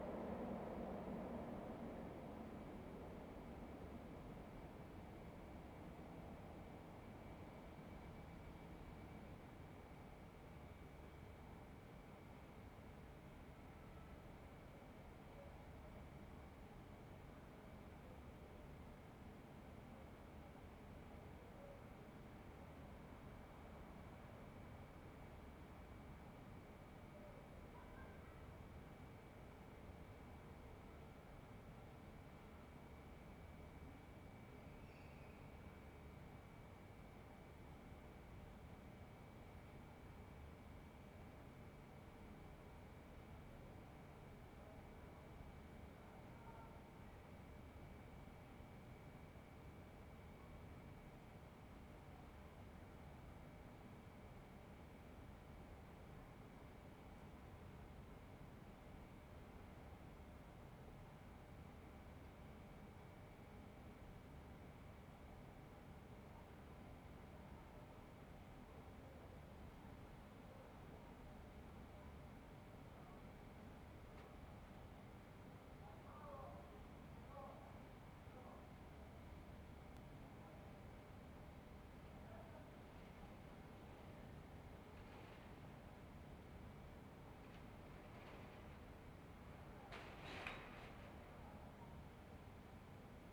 "Night on west terrace April 1st" Soundscape
Chapter XXX of Ascolto il tuo cuore, città, I listen to your heart, city
Wednesday April 1stth 2020. Fixed position on an internal terrace at San Salvario district Turin, three weeks after emergency disposition due to the epidemic of COVID19. Different position as previous recording.
Start at 10:52 p.m. end at 11:39 p.m. duration of recording 47'02''.